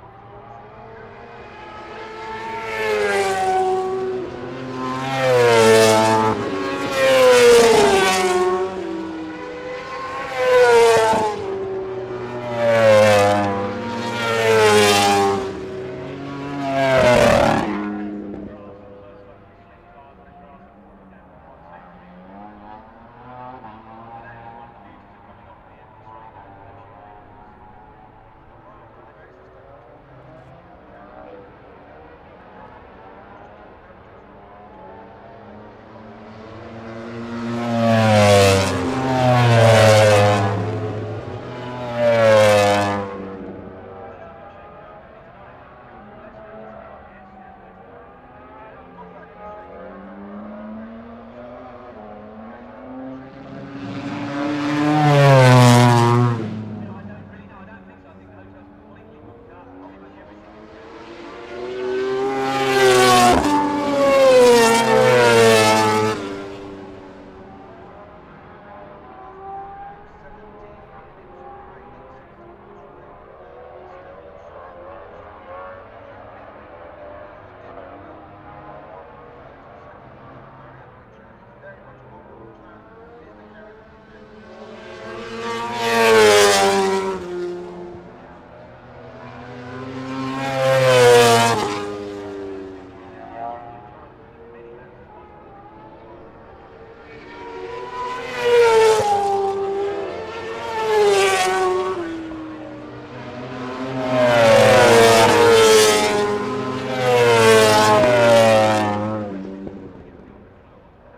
Unnamed Road, Derby, UK - British Motorcycle Grand Prix 2004 ... moto grandprix ...
British Motorcycle Grand Prix 2004 ... Qualifying part two ... one point stereo to minidisk ...
23 July, 14:20